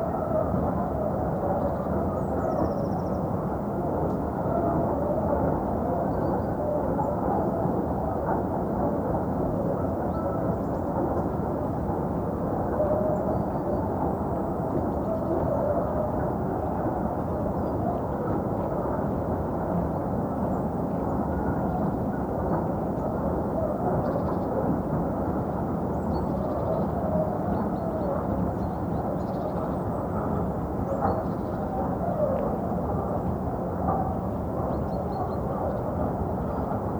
Horní Jiřetín, Czech Republic - Distant mine, pervasive sounds, astonishing view
This is one of the most spectacular views in existence. You stand in amongst trees and autumn colours; there are churring tits and woodpeckers. Immediately below is the historic, but run down, Castle Jeziri looking beautiful with yellow stone and dark red tiles, which in turn overlooks the broad North Bohemian plane stretching to green volcanic peaks in the far distance. The plane itself is surreal. The view is dominated by a vast open cast mine where deep pits expose brown coal seams and huge machines squeal and groan as they tear into the earth. Conveyor belt systems roar constantly carrying coal, soil and rocks kilometers across the mines to distant destinations. Elsewhere power stations with smoking, red-banded chimneys dot the landscape and the Unipetrol chemical works at Litvinov with many miles of pipes, cooling towers, storage tanks and flares gleams. The sound is constant, night and day, decade after decade.